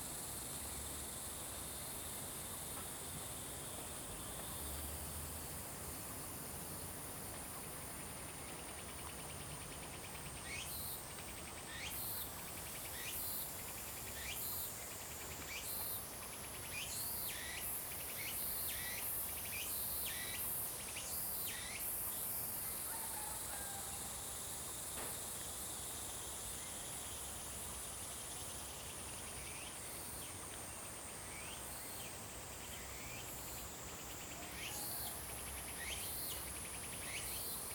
Woody House, 桃米里 Puli Township, Nantou County - Birds singing
Birds singing, Cicadas cry, Frog calls
Zoom H2n MS+XY